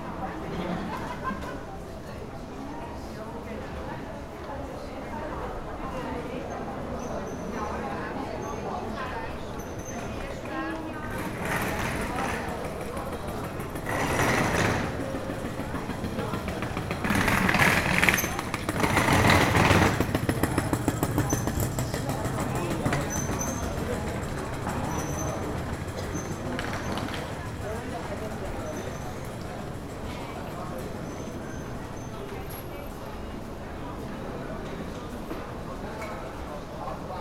Main Station, Aarau, Schweiz - Sounds of Tickets
Commuters check their tickets while hurrying to the local trains